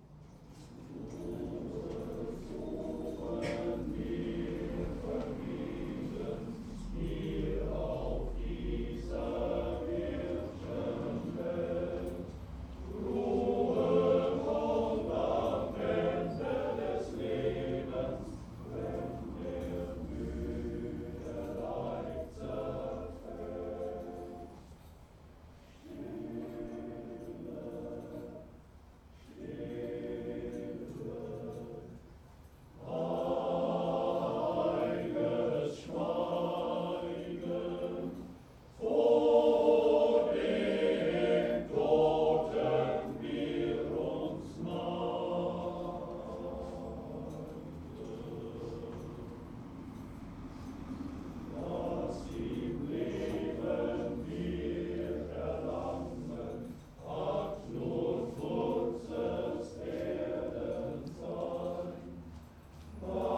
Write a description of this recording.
funeral, choir, hot summer day.